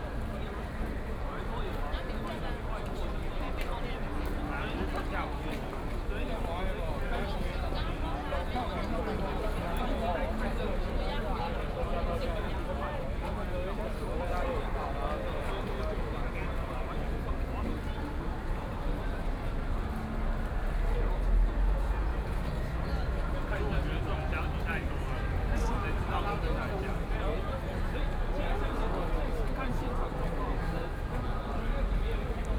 Participation in protest crowd, Student sit-ins in the alley
Binaural recordings, Sony PCM D100 + Soundman OKM II